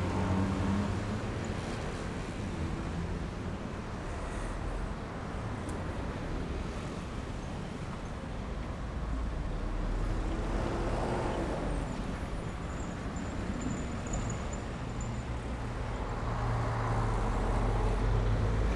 Sec., Zhongxing Rd., Wujie Township - At railroad crossing
At railroad crossing, Traffic Sound, Trains traveling through
Zoom H6 MS+ Rode NT4
Wujie Township, Yilan County, Taiwan, 25 July